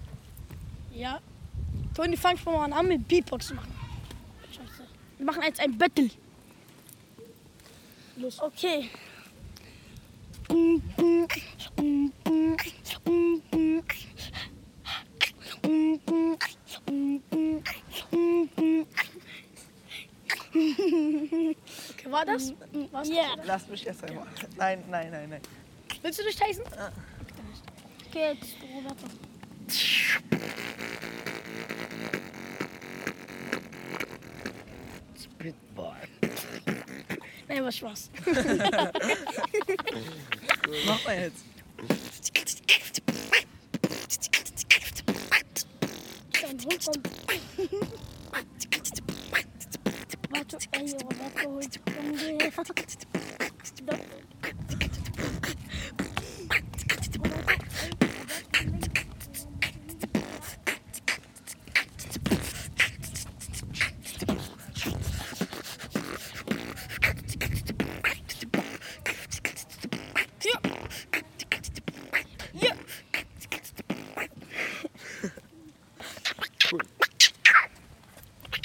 Wollankstraße, Soldiner Kiez, Wedding, Berlin - Wollankstraße 57A-D, Berlin - Beatbox battle by Toni and Roberto
Wollankstraße 57A-D, Berlin - 'Beatbox Battle' von Toni und Roberto.
Mitten in der Aufnahme wurde ich von Roberto, Toni und Tyson aufgegabelt, drei Jungs aus der Nachbarschaft. Wie sich herausstellte, waren zumindest zwei von ihnen äußerst talenierte Beatbox Artists, die sich angesichts des Mikrophons sofort in einen 'Beatbox Battle' stürzten.
Wollankstraße 57A-D, Berlin - Beatbox battle by Toni and Roberto.
In the course of recording I was interrupted by Roberto, Toni and Tyson, three teenagers from the neigbourhood. Two of them turned out to be astonishingly skilled beat box artists who immediately engaged in a 'beatbox battle'.
[Hi-MD-recorder Sony MZ-NH900 with external microphone Beyerdynamic MCE 82]